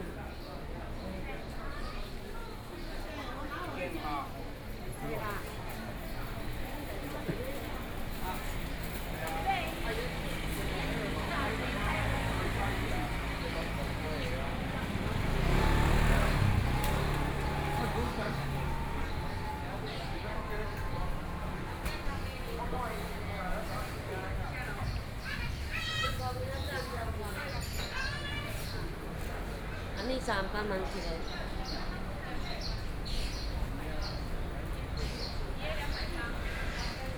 Sanfeng Central St., Kaohsiung City - Shopping Street
Traditional goods and food shopping street, Traffic Sound
Kaohsiung City, Taiwan, 16 May 2014